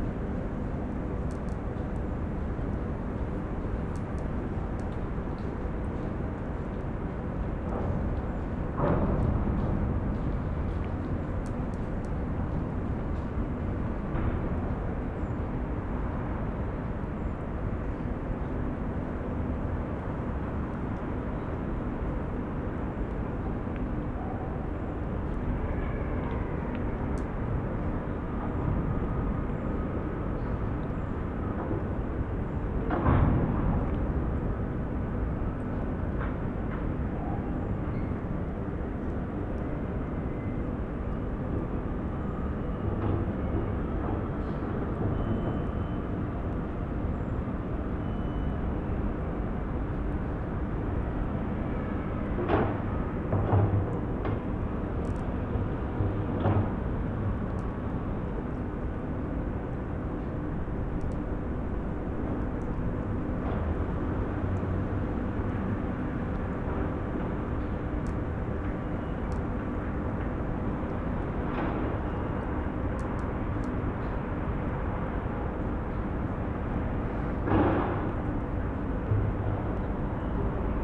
Berlin, Plänterwald, Spree - sunday soundscape
sunday afternoon industrial soundscape, sounds around the heating plant, from accross the river.
(tech note: SD702, audio technica BP4025)
Berlin, Germany, 15 January 2012